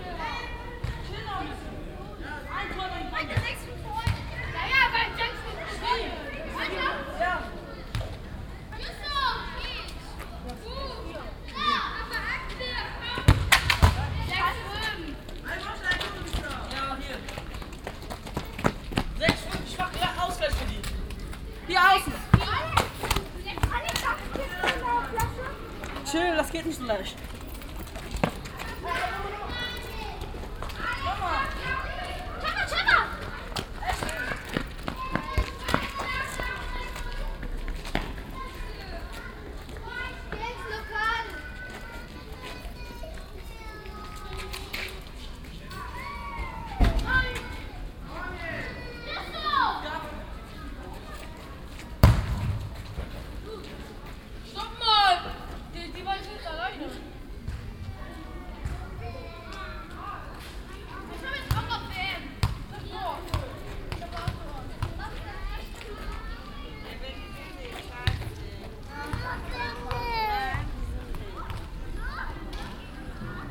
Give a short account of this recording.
nachmittags auf dem innenhof, fussballspielende kinder, soundmap nrw: topographic field recordings, social ambiences